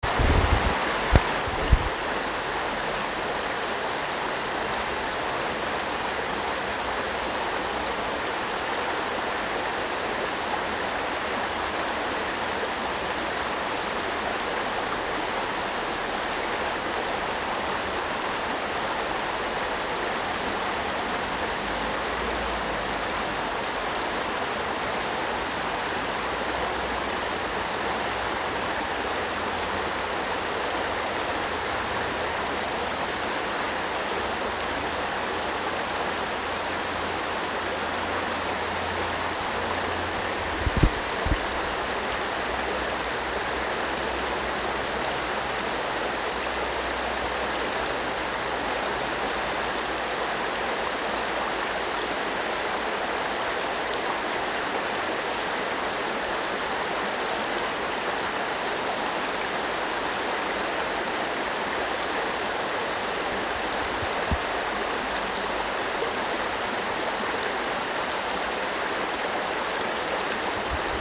Byker Bridge, Newcastle upon Tyne, UK - Ouseburn River
Walking Festival of Sound
13 October 2019
Ouseburn River
13 October, ~15:00